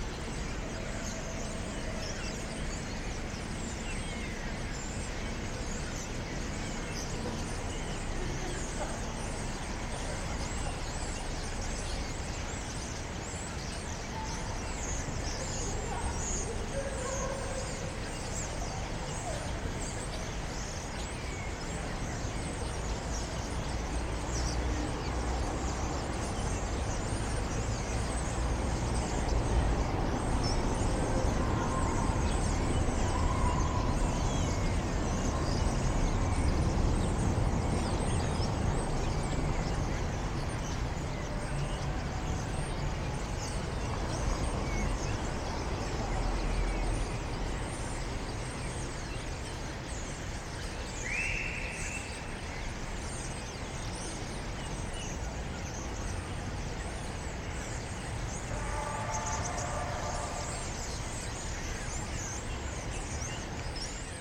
Berlin Dresdener Str, Waldemarstr. - starlings on construction cranes
100s of starlings on the cranes, from another perspective, a few steps back. also sounds from the surrounding houses, saturday early evening.
Berlin, Deutschland, September 2010